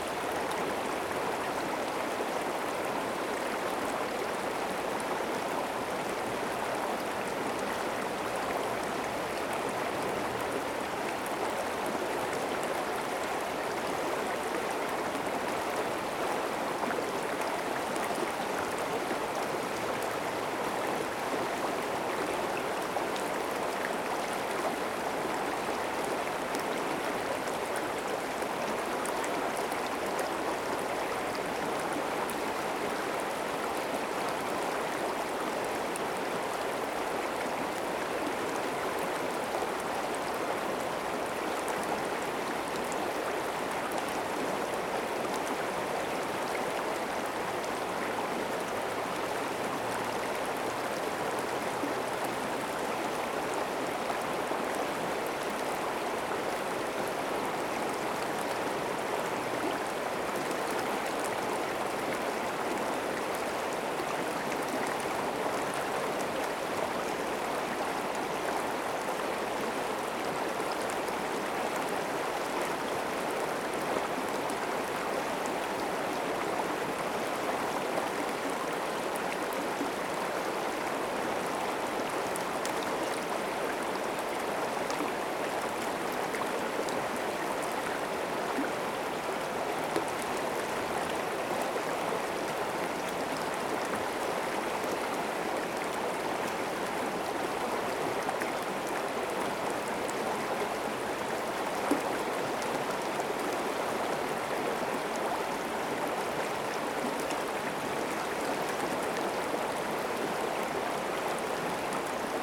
River Drava near Varazdin - River flowing
Sounds of river Drava stream. Recorded with Zoom H2n (MS, on a small tripod near the sound source).